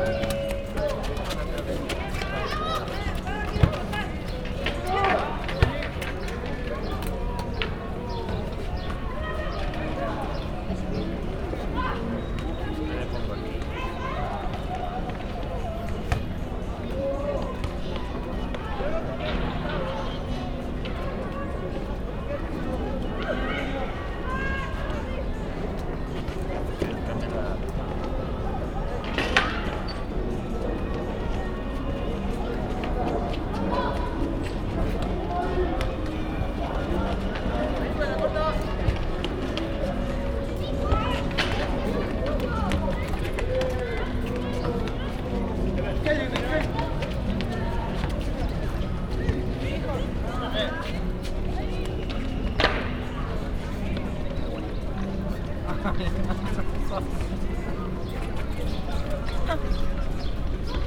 Av México s/n, Hipódromo, Cuauhtémoc, Ciudad de México, CDMX, Mexiko - Parque México
During our(katrinem and I) longer stay in Mexico City, we often visited this park